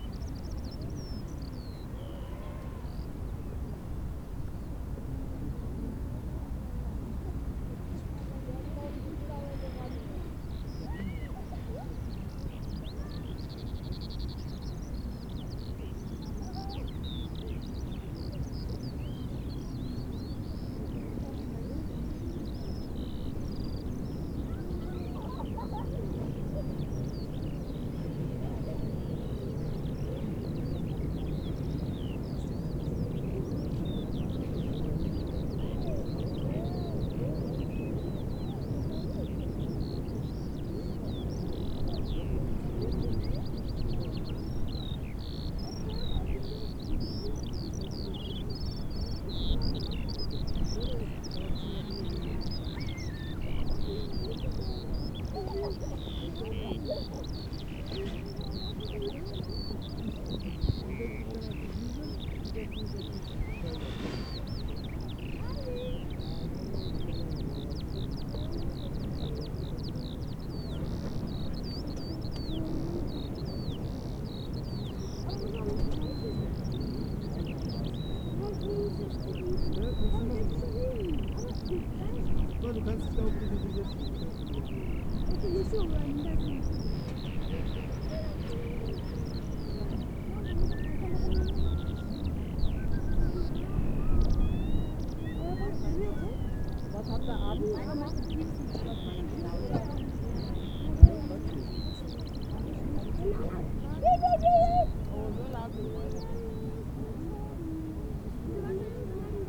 birds, vistors of the park
the city, the country & me: april 3, 2011